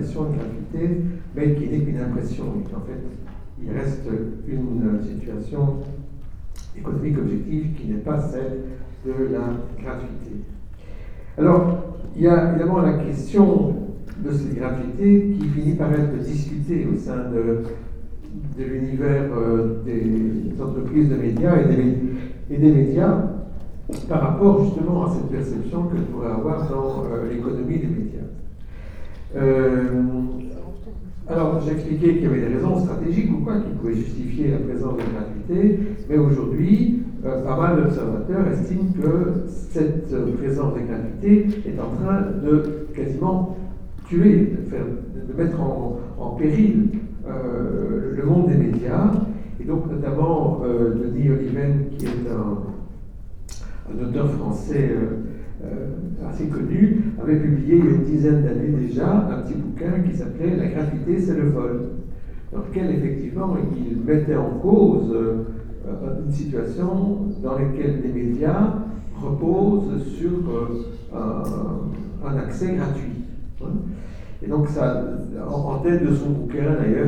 Centre, Ottignies-Louvain-la-Neuve, Belgique - A course of mass media
In the big Agora auditoire, a course about mass media.